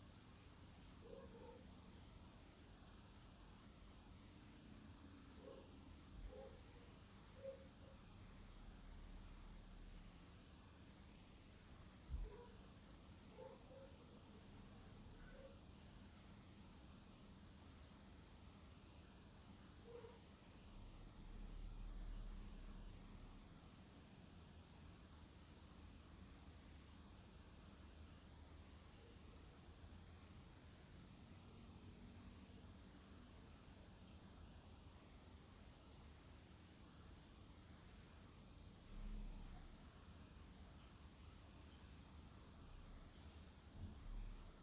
Interior Room at 41 Formby Avenue, Point Chevalier, Auckland, New Zealand - Interior Room
22 September